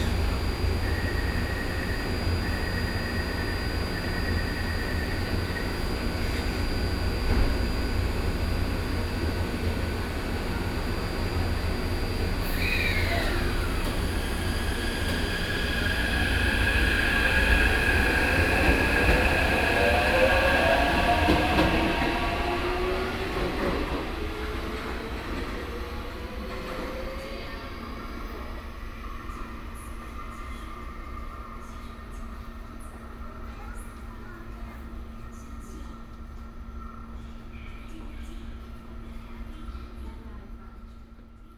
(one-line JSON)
{"title": "Fuxinggang Station, Beitou District, Taipei City - MRT trains arrive", "date": "2012-11-08 10:53:00", "latitude": "25.14", "longitude": "121.49", "altitude": "10", "timezone": "Asia/Taipei"}